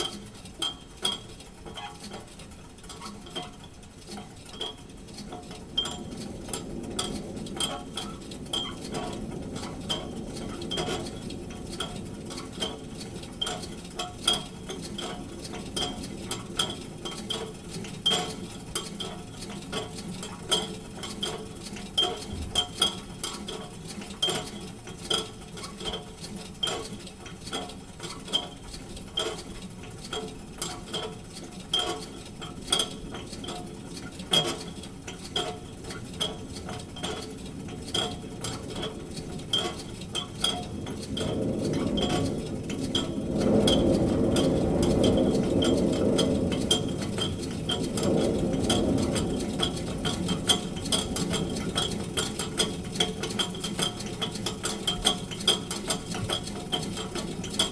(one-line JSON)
{"title": "Lithuania, Utena, inside the ventilation tube", "date": "2011-02-08 11:10:00", "description": "windy day outside...strange sounds inside the ventilation tube", "latitude": "55.51", "longitude": "25.60", "altitude": "110", "timezone": "Europe/Vilnius"}